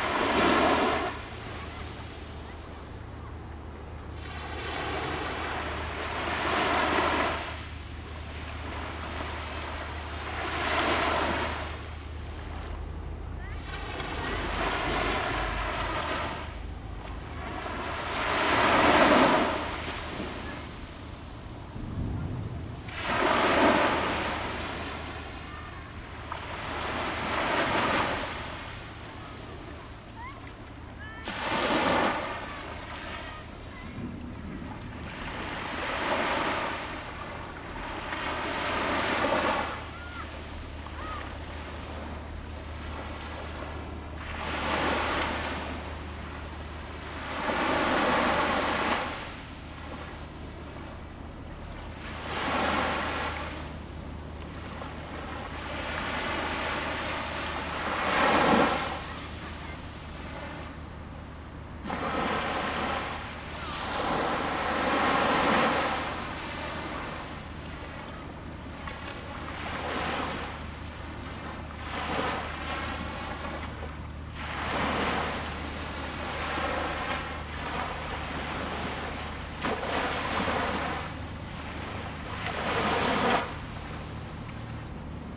{"title": "Wanli Dist., New Taipei City - sound of the waves", "date": "2016-08-04 10:50:00", "description": "sound of the waves, At the beach\nZoom H2n MS+XY +Sptial Audio", "latitude": "25.18", "longitude": "121.69", "altitude": "60", "timezone": "Asia/Taipei"}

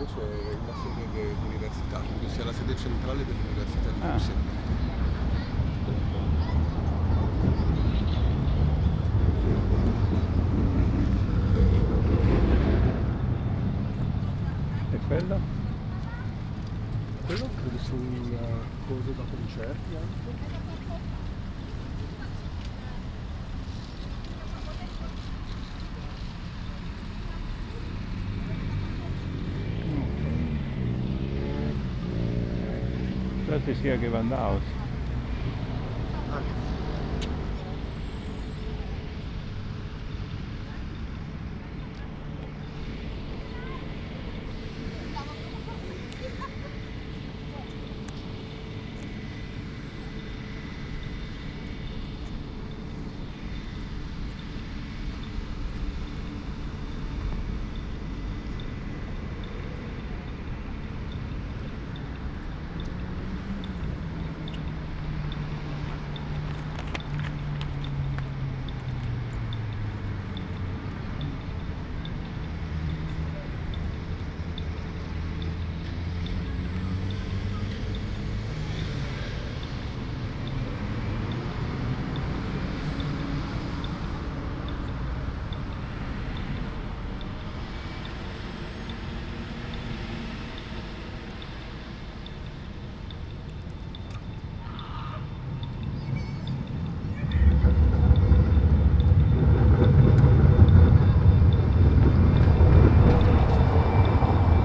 {"title": "Markt, Leipzig, Germania - “Sunday summer music-walk in Leipzig: soundwalk”", "date": "2020-07-19 12:18:00", "description": "“Sunday summer music-walk in Leipzig: soundwalk”\nSunday, July 19th 2020, soundwalk Marktplatz, Thomaskirke, Opera Haus, Gewandhaus, Nikolaikirke.\nStart at 00:18 p.m. end at 01:28 p.m., total duration of recording 01:09:48\nBoth paths are associated with synchronized GPS track recorded in the (kmz, kml, gpx) files downloadable here:", "latitude": "51.34", "longitude": "12.37", "altitude": "126", "timezone": "Europe/Berlin"}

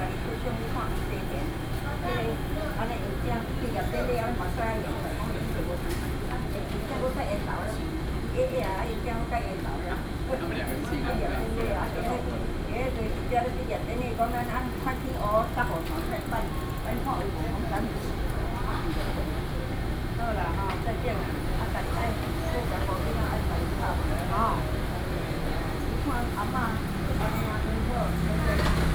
{"title": "Ln., Sec., Xinsheng S. Rd. - in front of the Convenience store", "date": "2012-06-09 19:12:00", "description": "in front of the Convenience store, Small alley, The old woman was calling from a public phone\nSony PCM D50 + Soundman OKM II", "latitude": "25.02", "longitude": "121.53", "altitude": "19", "timezone": "Asia/Taipei"}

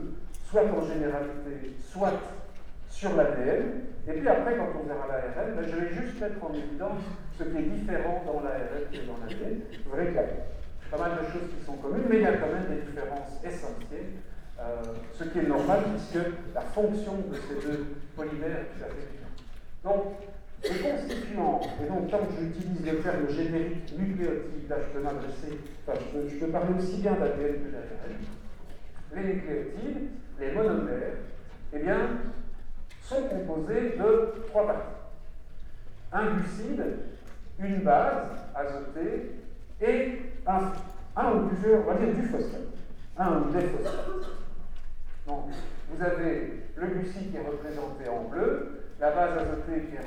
{"title": "Centre, Ottignies-Louvain-la-Neuve, Belgique - A course of biology", "date": "2016-03-18 08:25:00", "description": "In the very very very huge Socrate auditoire, a course of Biology.", "latitude": "50.67", "longitude": "4.61", "altitude": "116", "timezone": "Europe/Brussels"}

